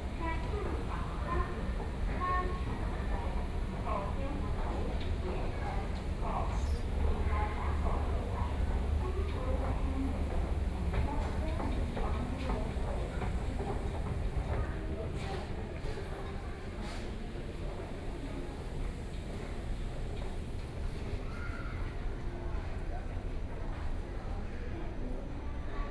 Walking into the station
Sony PCM D50+ Soundman OKM II
Taipei City, Taiwan, 27 April 2014, ~13:00